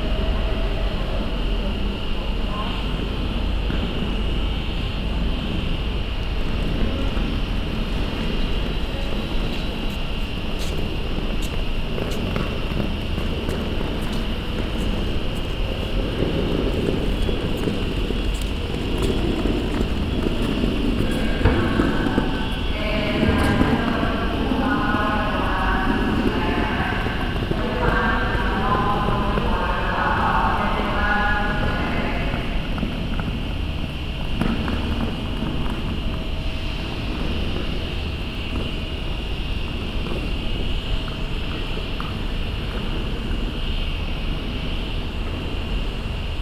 Hagen, Deutschland - Hagen, main station, track 7
At the main station of Hagen at the tracks daytimes on a fresh, windy day.
You can listen to a general atmosphere of the track situation reverbing under a glass roof. An airy permanent noise, announces, suitcases rolling by. At the end the sound of tape being ripped by a man who repairs his trolley.
soundmap d - topographic field recordings and socail ambiencs